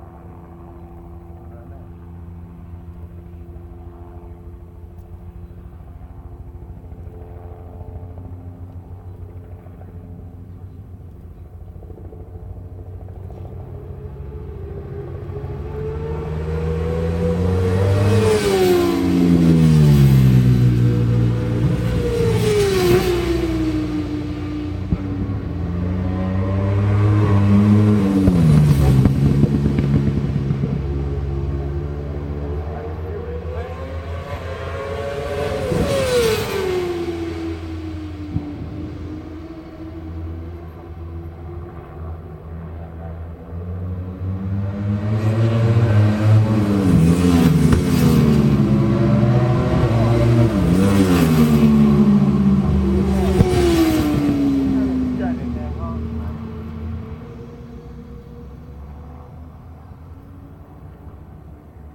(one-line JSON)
{"title": "West Kingsdown, UK - World Superbikes 2002 ... Qual(contd)", "date": "2002-07-27 11:30:00", "description": "World Superbikes 2002 ... Qual(contd) ... one point stereo mic to minidisk ...", "latitude": "51.35", "longitude": "0.26", "altitude": "152", "timezone": "Europe/London"}